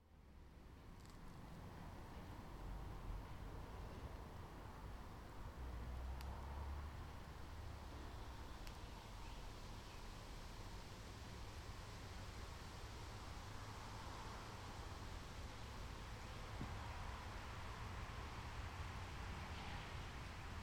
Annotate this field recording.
trigonometrischer punkt am weißen stein, udenbreth, eifel, belgische grenze, 05.07.2008, 17:10, wikipedia: Mit seinen 692 m ü. NN ist der Weiße Stein nicht nur der höchste Berg von Rureifel bzw. Nordeifel und die höchste Erhebung des linksrheinischen Nordrhein-Westfalen, sondern auch die zweithöchste Erhebung in Belgien, obgleich seine höchste Stelle (vermutlich) wenige Meter östlich der B 265 auf deutschem Gebiet liegt. Sie befindet sich an einer nicht genau gekennzeichneten Position innerhalb eines bewaldeten Bereichs, der die hiesig ovalförmige 690-Meter-Höhenlinie übersteigt und etwas nordwestlich von dem an der kleinen Zufahrtstraße rund 60 m west-nordwestlich eines Wasserbehälters bzw. nördlich eines Parkplatzes auf 689,4 m ü. NN befindlichen trigonometrischem Punkt liegt.